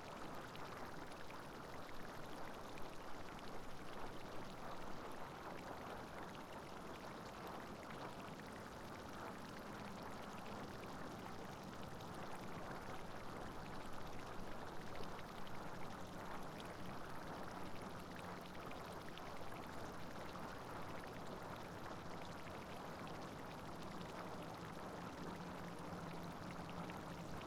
The biggest spurt in the background produces a lot of whiffs and splashes. Close to the recorder the mud produces a lot of tiny bubbles.
The audio has been cropped to eliminate plane's noises from the near airport.
No other modifications has been done.
TASCAM DR100 MKII
Manziana RM, Italy, October 2014